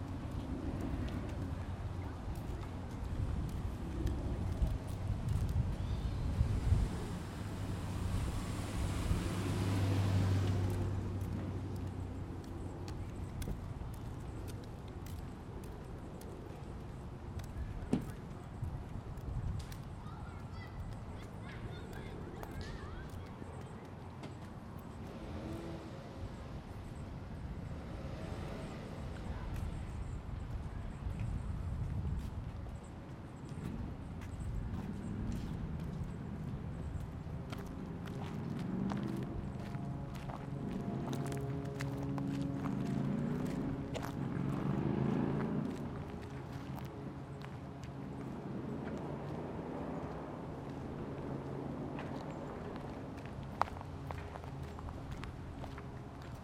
Woodland Park, Seattle WA
Part three of soundwalk in Woodland Park for World Listening Day in Seattle Washington.
WA, USA, 18 July 2010